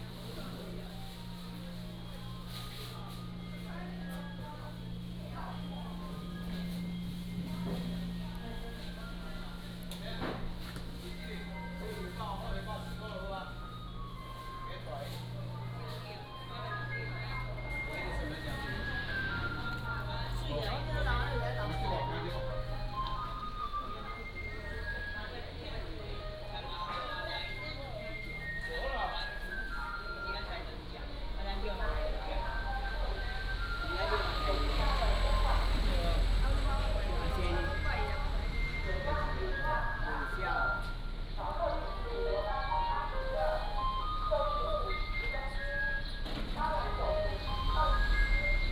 九井路, Lieyu Township - Walking in the Street
In the street, Birds singing, Traffic Sound, Market, Garbage truck
福建省, Mainland - Taiwan Border, 4 November 2014